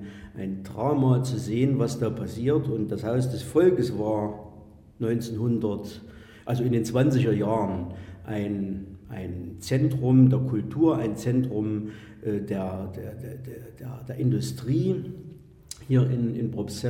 probstzella - haus des volkes
Produktion: Deutschlandradio Kultur/Norddeutscher Rundfunk 2009